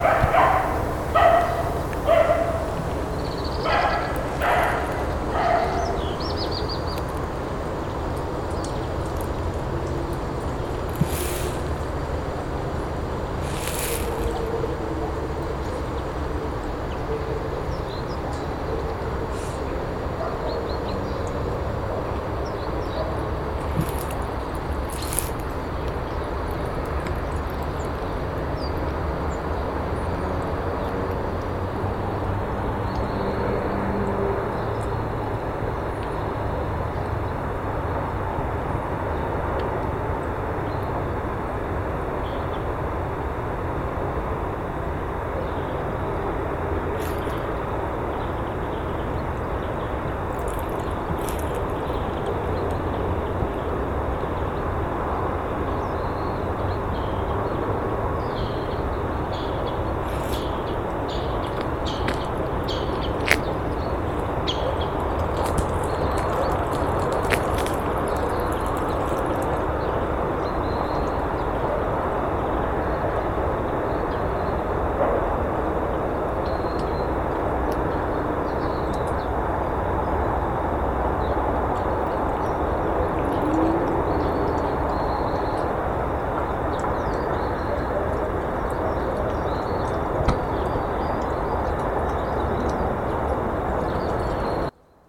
вулиця Шмідта, місто Костянтинівка, Donetsk Oblast, Украина - Животные в промзоне
Лай собак и другие интершумы
Звук:
Zoom H2n
Donetsk Oblast, Ukraine, 18 October